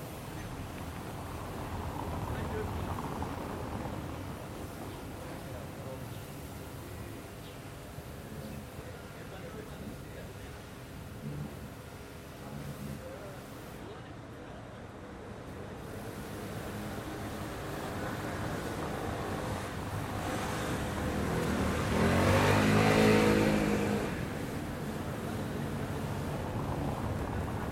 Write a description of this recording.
Mild traffic, people passing by, talking.